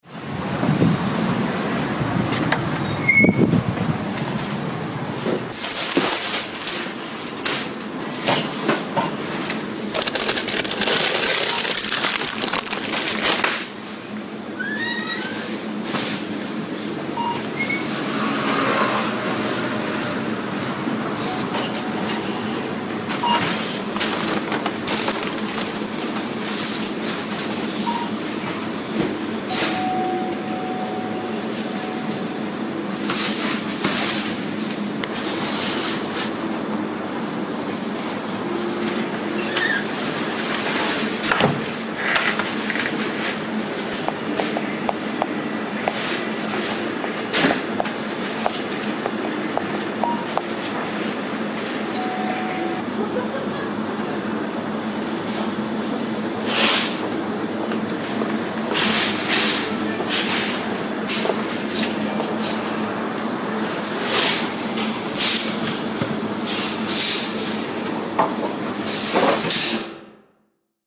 Vincennes, France - Picard
Inside the Picard Surgelés store on the avenue de Paris in Vincennes.